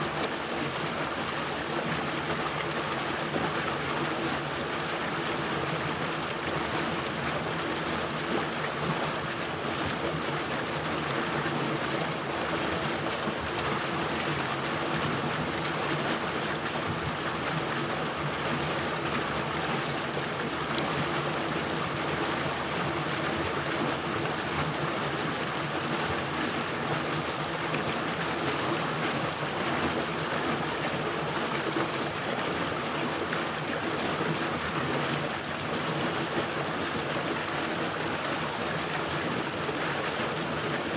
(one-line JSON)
{"title": "磺港溪, Taipei City, Taiwan - Hot spring water sound", "date": "2012-11-09 06:09:00", "latitude": "25.14", "longitude": "121.52", "altitude": "151", "timezone": "Asia/Taipei"}